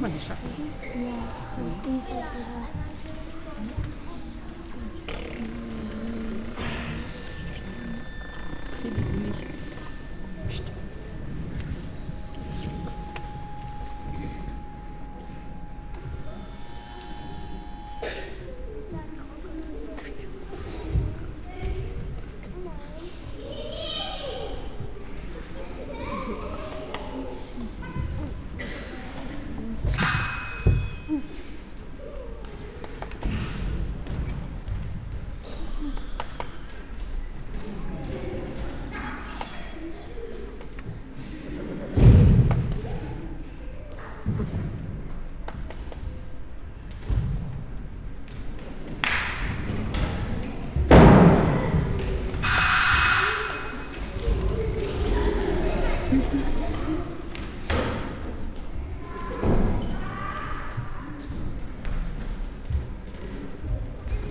Pempelfort, Düsseldorf, Deutschland - Düsseldorf, Tonhalle, main hall, performance
Inside the main hall of the Tonhalle during a performance of "The Big Bang Box" - a music theatre dance piece for children. The sounds of the performance accompanied by the sound of the mostly young audience.
soundmap nrw - topographic field recordings, social ambiences and art places
Deutschland, European Union